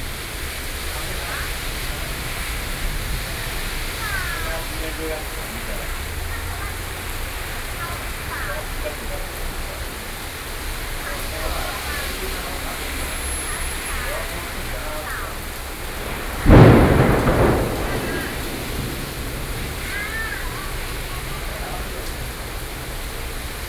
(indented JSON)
{
  "title": "Zhongshan District, Taipei - Thunderstorm",
  "date": "2013-07-06 14:55:00",
  "description": "Traffic Noise, Sound of conversation among workers, Sony PCM D50, Binaural recordings",
  "latitude": "25.07",
  "longitude": "121.53",
  "altitude": "13",
  "timezone": "Asia/Taipei"
}